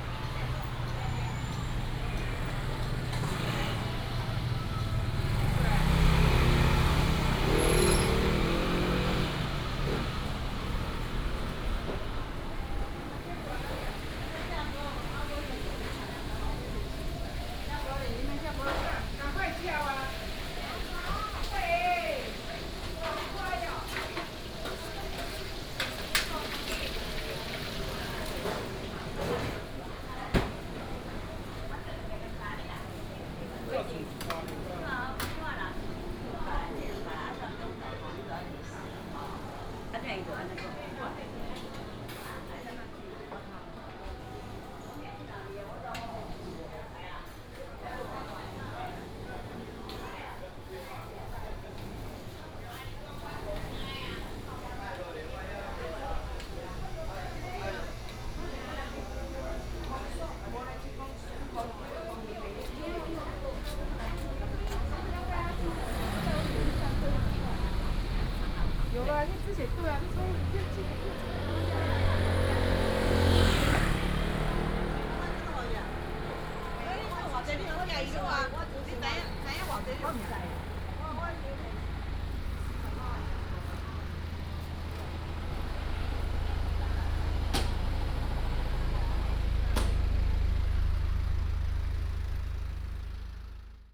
東園市場, Wanhua Dist., Taipei City - traditional market
Traditional market, traffic sound, In the alley
Taipei City, Taiwan, 25 August 2017